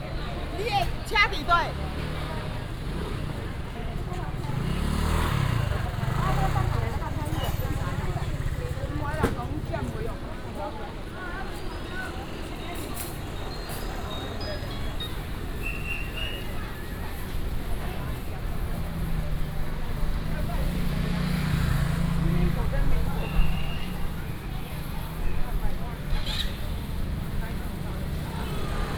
建國公園, 新竹市東區, Taiwan - in the Park
in the Park, Traffic Sound, Many older people in the park